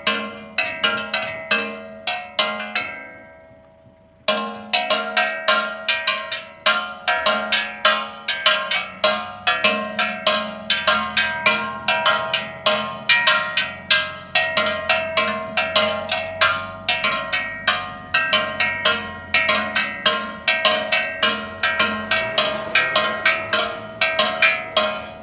Playa Ancha - Gas Seller Percussion
Gas Seller are doing percussion at the back of the truck to announce he is passing by.
Recorded by a MS Schoeps CCM41+CCM8